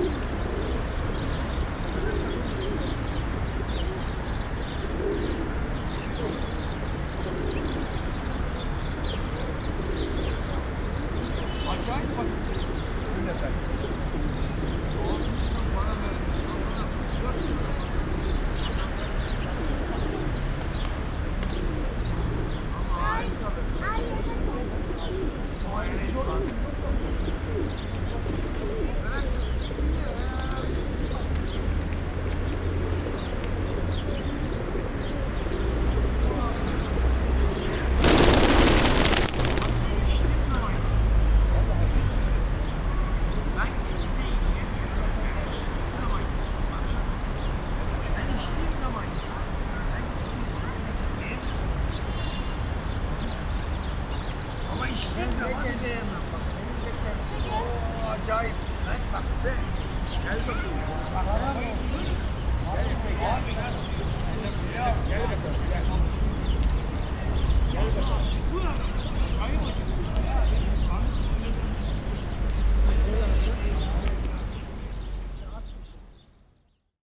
Park entrance with birds, Istanbul
pigeons and birds on a warm winter afternoon at the park entrance